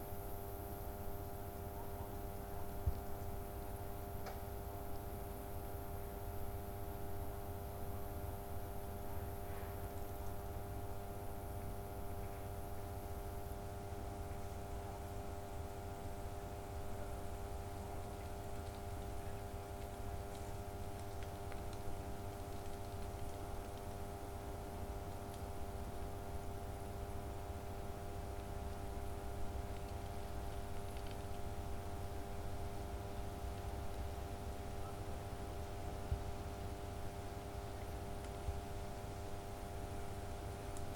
V Drago, Ribniška ulica, Maribor, Slovenia - corners for one minute
one minute for this corner: V Drago and Ribniška ulica, transformer
24 August 2012, 20:41